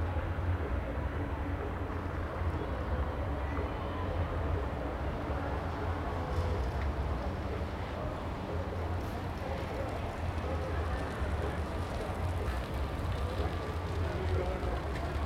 Dekanhuset, Biskopsgatan, Uppsala, Sweden - Friday night in central Uppsala, clock strikes eleven
A night in central Uppsala. The clock tower strikes eleven, cars on gravel, students shouting, bicycles rattling, party music from Värmlands nation in the background. Recorded with Zoom H2n, 2CH stereo mode, deadcat on, held in hand.
February 2019